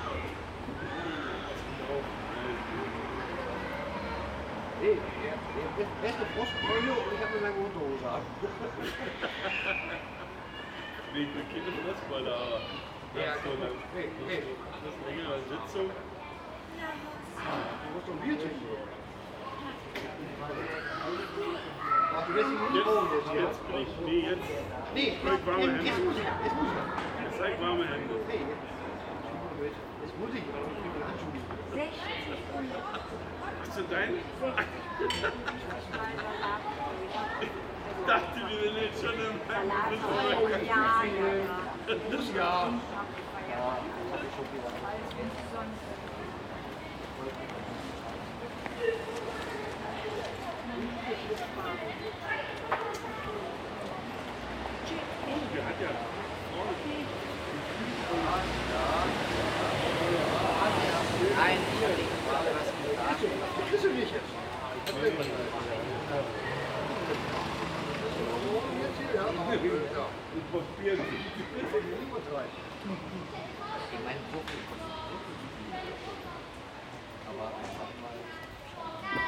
Soldiner Straße 14, Berlin - A Sunday afternoon at the cornershop.
[I used the Hi-MD-recorder Sony MZ-NH900 with external microphone Beyerdynamic MCE 82]
Soldiner Straße 14, Berlin - Ein Sonntagnachmittag vor dem Späti.
[Aufgenommen mit Hi-MD-recorder Sony MZ-NH900 und externem Mikrophon Beyerdynamic MCE 82]